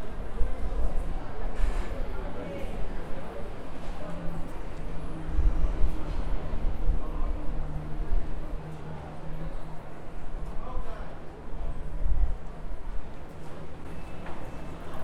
Rua Vergueiro - Paraíso, São Paulo - SP, 01504-001, Brasil - Centro Cultural São Paulo (CCSP)
Paisagem Sonora do Centro Cultural São Paulo, gravado por estudantes de Rádio, TV e Internet
Sexta Feira, 12/04/2019
- Paraíso, São Paulo - SP, Brazil